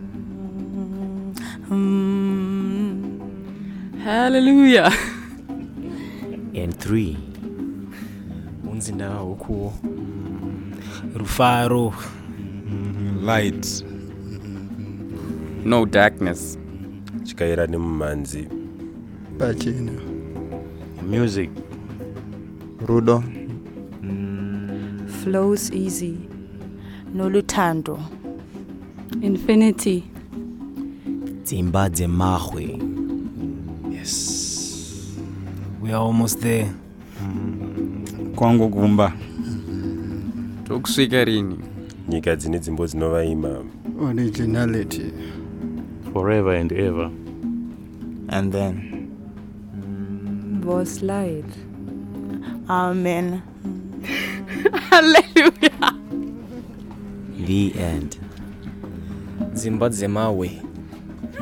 What you are listening to is an impromptu sound piece produced by the audience of a workshop event at the Goethe Zentrum/ German Society in Harare. We edited it together in the open source software Audacity and uploaded the track to the All Africa Sound Map.
The workshop was addressing the possibilities of sharing multimedia content online and introducing a forthcoming film- and media project for women in Bulawayo.
The track is also archived here:
Harare, Zimbabwe, 2 November